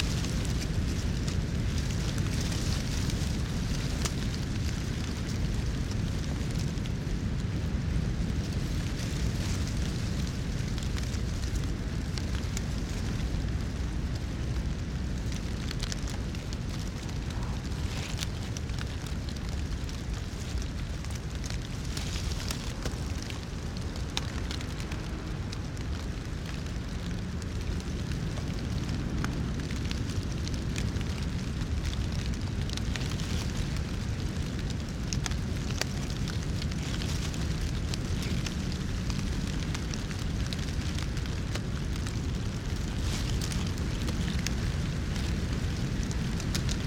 Braník woodland, a stormy night, rain and wind, Nad Údolím, Praha, Czechia - A stormy night. Wind and rain on crackly leaves.
Recorded from a continuous audio steam set up at this location in a low bush and left overnight until the following morning. After dark no birds sang. One plane passes overhead. The background drone is the area's constant traffic. The close sounds are rain drops falling on dead leaves and wind ruffling through the undergrowth. Despite the rain the leaves are very dry and crackly. The movements heard trace sharp gusts of wind at ground level.